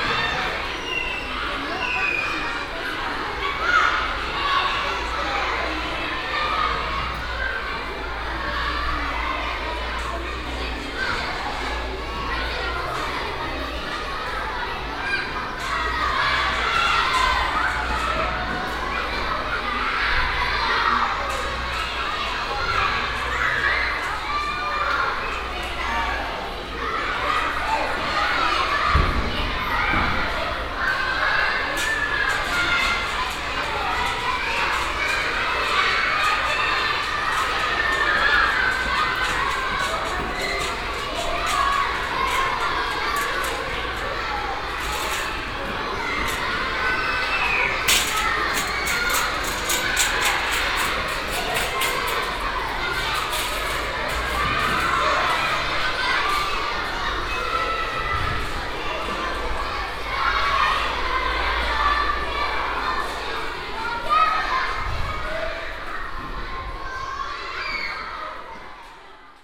paris, rue des vertus, elementary school
a small, old school on midday while a break. kids enjoy their free time on the school's playground
international cityscapes - sociale ambiences and topographic field recordings